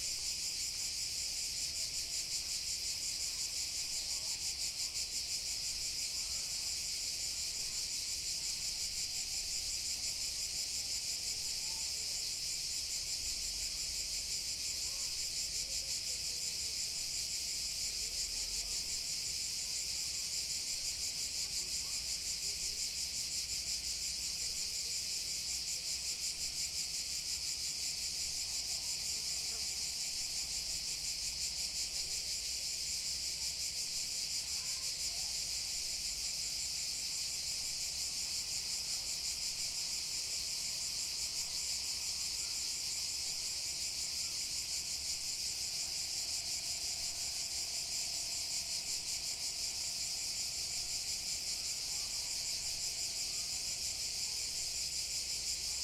Jelsa, Hrvatska - Graveyard soundscape
Sounds of crickets, distant boat engines and people on the distatn beach. Sounds recordend on the graveyard with Zoom h4n.
9 August 2014, 13:55